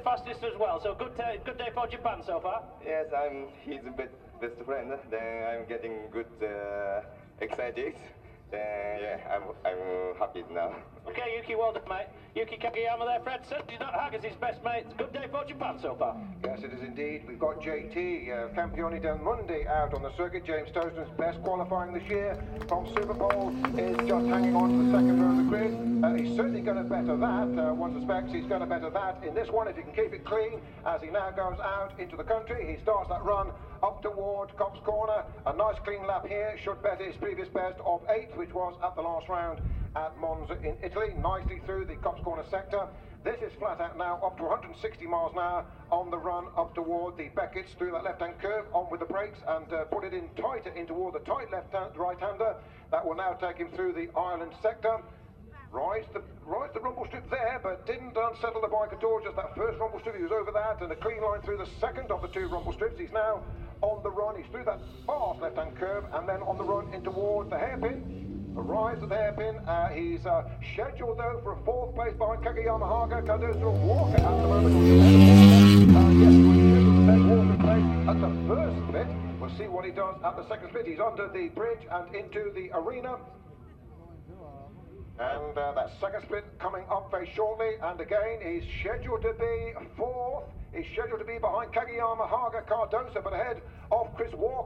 Silverstone Circuit, Towcester, UK - world superbikes 2005 ... super pole ...
world superbikes 2005 ... superpole ... one point stereo mic to sony minidisk ... plus commentary ...
5 May, 16:00